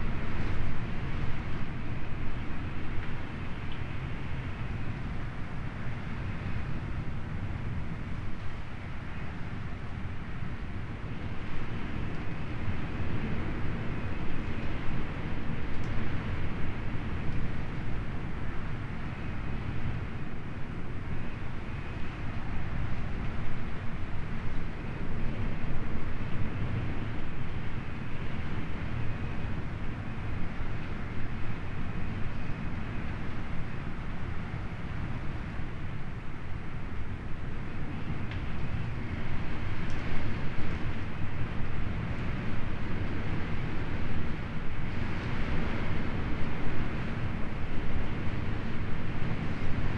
Fishermans Bothy, Isle of Mull, UK - Raging storm from inside bothy on Kilfinichen Bay
I awoke to the wonderful sound of a storm raging outside the bothy I was staying in, with the dying embers from the wood burning stove to keep warm it was a delight to listen to. Sony M10 boundary array.
Scotland, United Kingdom